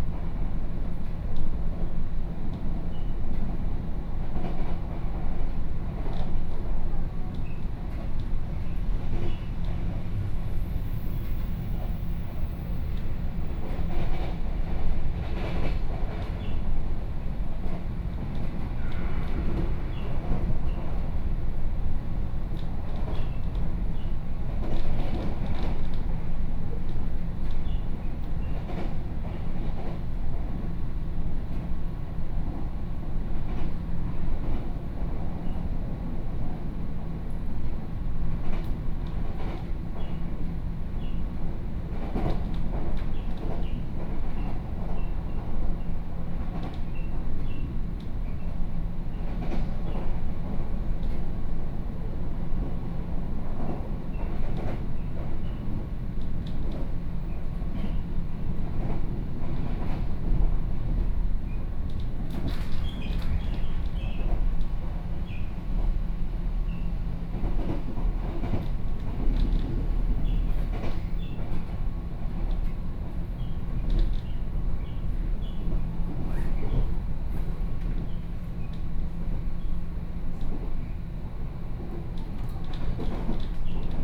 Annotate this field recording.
from Fugang Station to Yangmei Station, Sony PCM D50+ Soundman OKM II